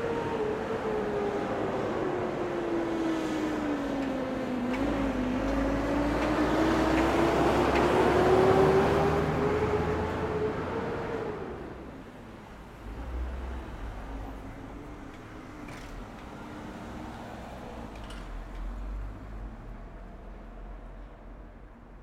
Woodward Ave, Ridgewood, NY, USA - Early Afternoon in Ridgewood, Queens
Traffic sounds on the intersection between Woodward Ave and Cornelia St. in Ridgewood, Queens.